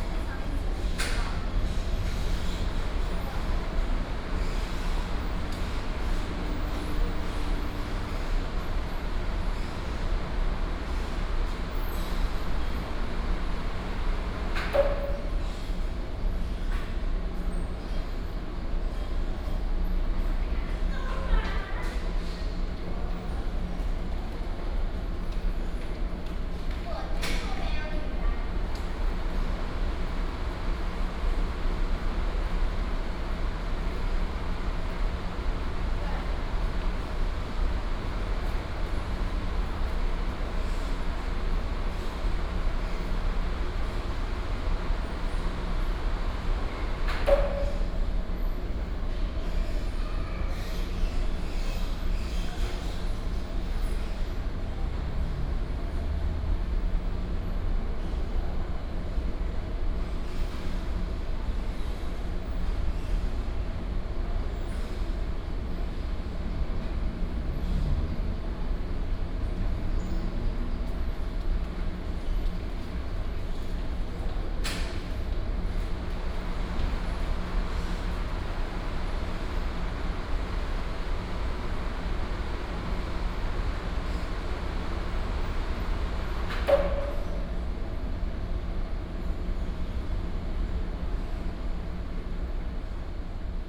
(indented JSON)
{
  "title": "IKEA Taoyuan Store, Taoyuan City - Automatic glass door",
  "date": "2017-07-05 16:23:00",
  "description": "Export, air conditioning, Escalator, Automatic glass door, Traffic sound",
  "latitude": "24.99",
  "longitude": "121.29",
  "altitude": "111",
  "timezone": "Asia/Taipei"
}